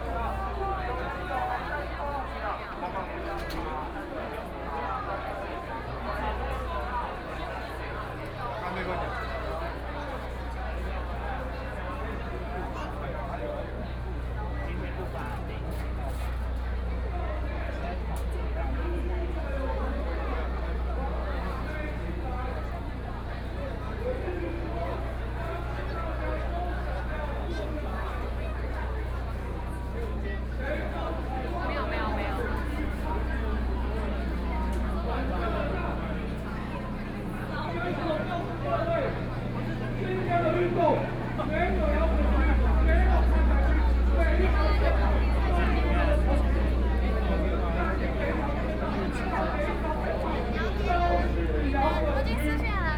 Qingdao E. Rd., Taipei City - Packed with people on the roads
Packed with people on the roads to protest government, Walking through the site in protest, People cheering, Public participation in protests will all nearby streets are packed with people, The number of people participating in protests over fifty
Binaural recordings, Sony PCM D100 + Soundman OKM II